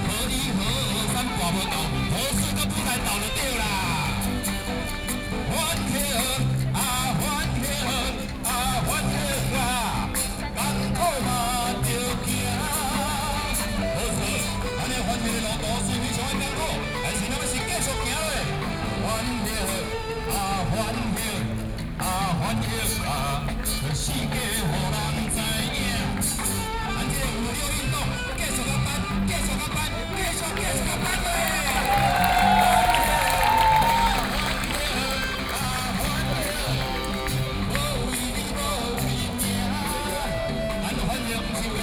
Liberty Square, Taipei - Taiwanese singer
Opposed to nuclear power plant construction, Sony PCM D50 + Soundman OKM II